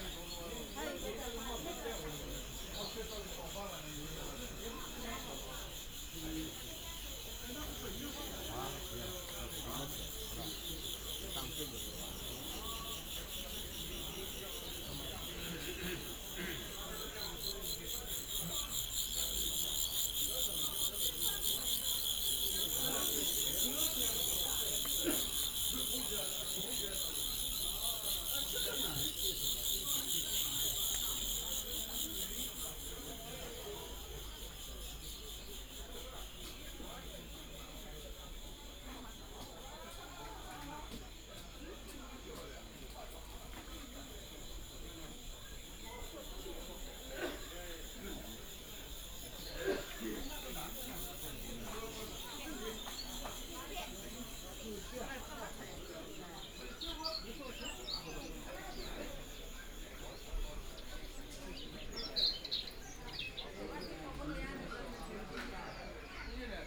Sound of crickets, Antique Market, Pet and bird market, Binaural recording, Zoom H6+ Soundman OKM II
Huangpu, Shanghai, China, 3 December